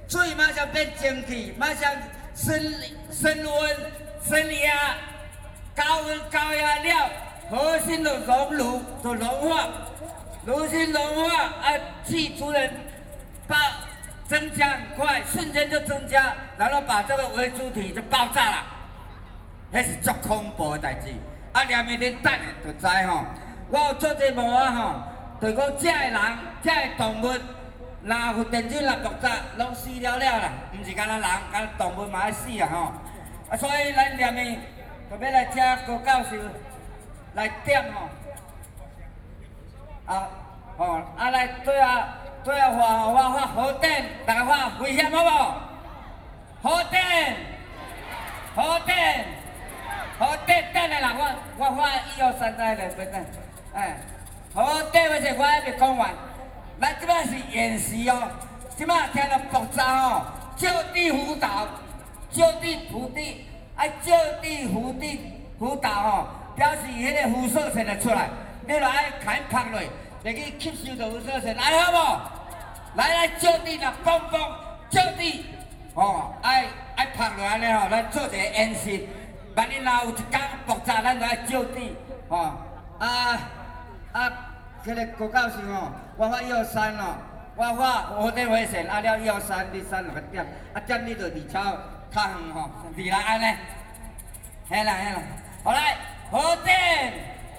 Ketagalan Boulevard, Taipei City - anti-nuclear protesters
anti-nuclear protesters, spech, Sony PCM D50 + Soundman OKM II
中正區 (Zhongzheng), 台北市 (Taipei City), 中華民國, 19 May